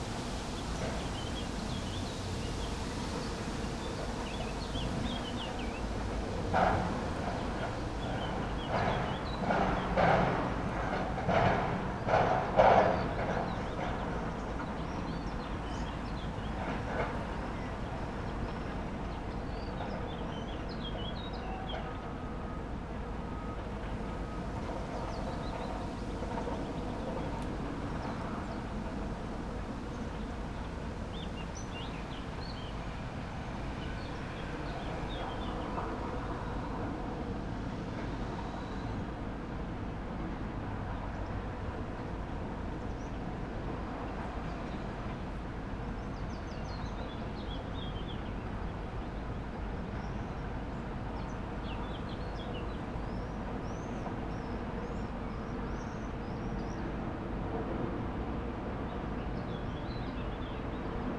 Rte de Belval, Esch-sur-Alzette, Luxemburg - wind, machine sounds
Esch-sur-Alzette, machine sounds from the nearby Acelor Mittal plant premises, fresh wind in trees
(Sony PC D50, Primo EM172)